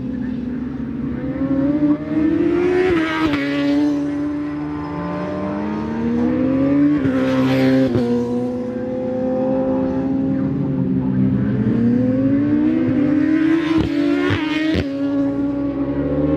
Stapleton Ln, Leicester, UK - British Superbikes 2004 ... Qualifying ...
British Superbikes 2004 ... Qualifying ... part one ... Edwina's ... one point stereo mic to minidisk ...